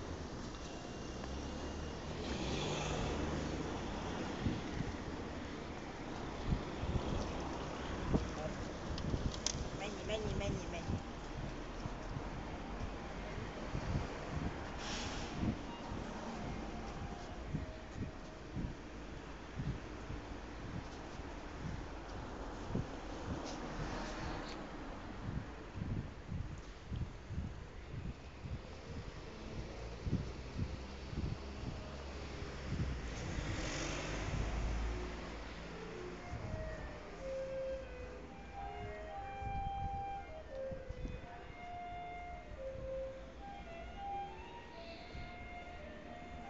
XIII. kerület, Budapest, Magyarország - Budapest 13th district music school
Approaching the crossing of Hollan Erno str. and Radnoti Miklos str. where the 13th District Music School is located. A woman says "menjel, menjel" ("go, go"). Music from the music school. Traffic. A cablecar passing.